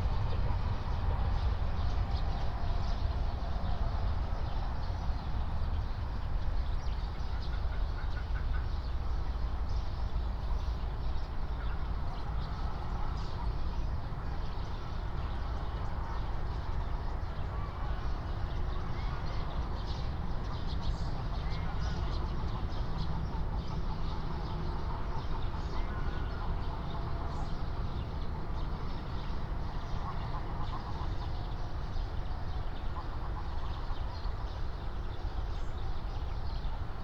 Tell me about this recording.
07:00 Berlin, Buch, Moorlinse - pond, wetland ambience